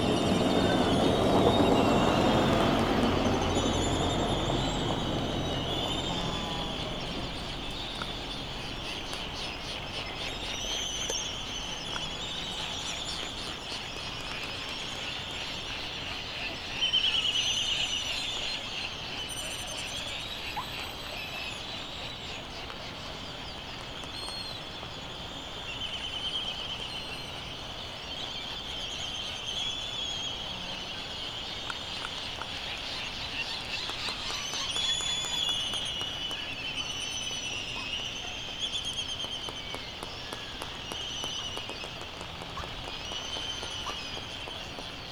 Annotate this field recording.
Sand Island ... Midway Atoll ... open lavalier mics ... bird calls ... laysan albatross ... white terns ... black noddy ... bonin petrels ... canaries ... background noise ...